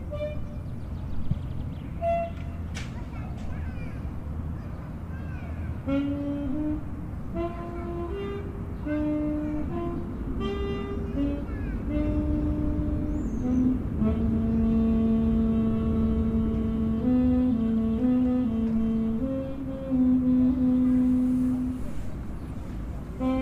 Leikkikenttä Brahe, Porvoonkatu, Helsinki, Suomi - playground saxophone
Saxophone player at the children´s playground.
21 June 2015, 21:00